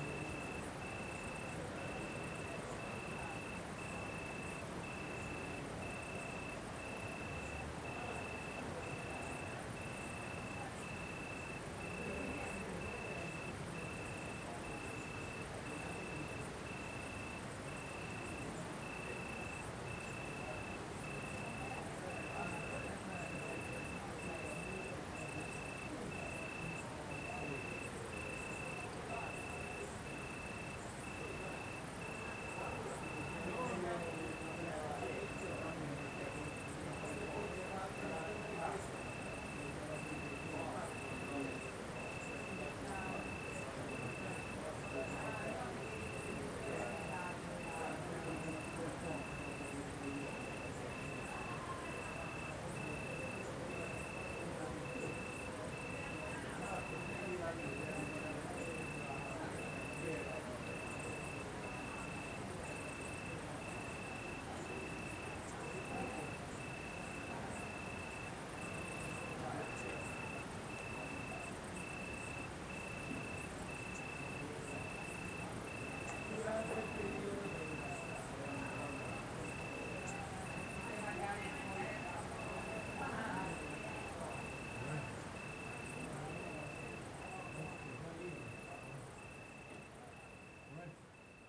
Molini di Triora IM, Italien - Molini di Triora, Via Case Soprane - At night
[Hi-MD-recorder Sony MZ-NH900, Beyerdynamic MCE 82]
29 August 2015, 22:38